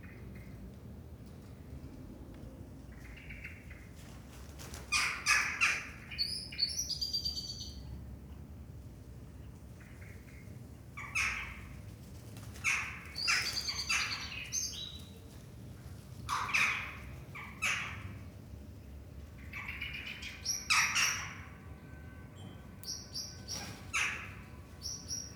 Den Haag, Netherlands - Birds fighting
Every evening around the same time there's a major dispute in our courtyard about which birds are going to sleep in which trees. Here's a short outtake (it goes on for ages). Crows, magpies, starlings and sometimes a blackbird. Seems like the current situation is encouraging birds and animals to take over the city more and more.
Recorded with a Soundfield ST350, Binaural decode.